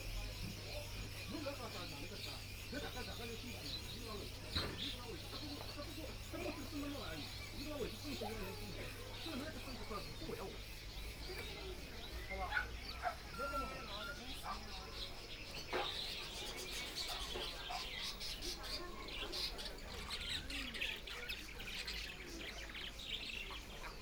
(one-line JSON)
{"title": "万商花鸟市场, Shanghai - Pet and bird market", "date": "2013-12-03 13:30:00", "description": "Sound of crickets, Antique Market, Pet and bird market, Binaural recording, Zoom H6+ Soundman OKM II", "latitude": "31.22", "longitude": "121.48", "altitude": "14", "timezone": "Asia/Shanghai"}